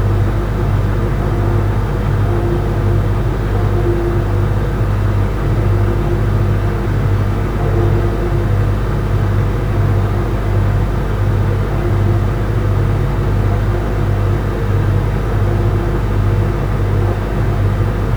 Calle San Pedro de Cardeña, Burgos, Spain - 2020-04-07 Room Tone: Kitchen
Part of my daily practice of listening not only outwards, but also inwards, towards my immediate surrondings. This is a room tone take of the sound of my kitchen.